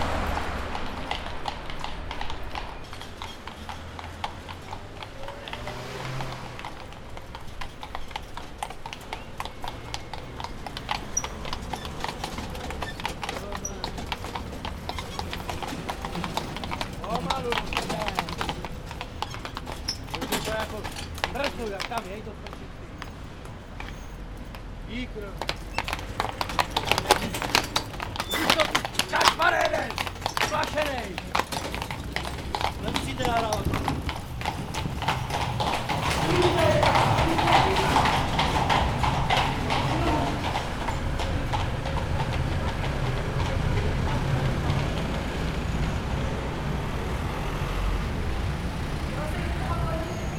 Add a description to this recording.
While walking towards the Ecotechnical Museum in Bubeneč we were joined by a pair of horses for a while. They were scared of passing cars and the coachman drove the horses into the tunnel. A train was just passing.